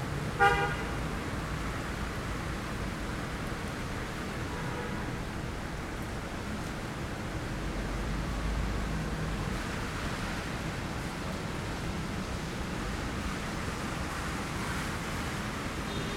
W 135th St, New York, NY, USA - Harlem Thunderstorm
New York City Police Department (NYPD) sirens and car horns honk as a thunderstorm passes through Central Harlem, NYC. Raining and ~70 degrees F. Tascam Portacapture X8, A-B internal mics facing north out 2nd floor apartment window, Gutmann windscreen, Manfrotto Nanopole. Normalized to -23 LUFS using DaVinci Resolve Fairlight.
United States